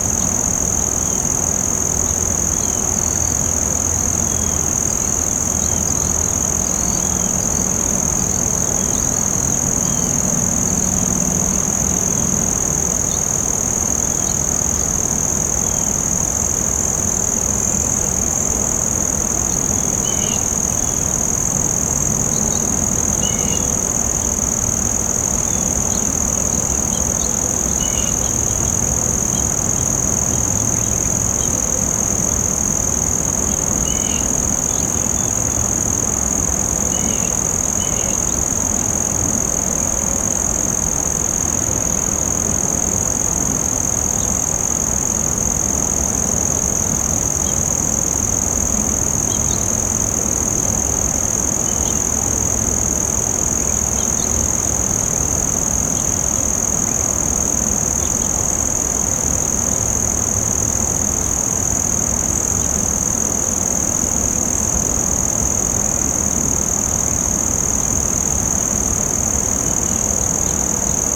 Morning ambient sounds recorded from atop bluff overlooking rapids in Meramec River.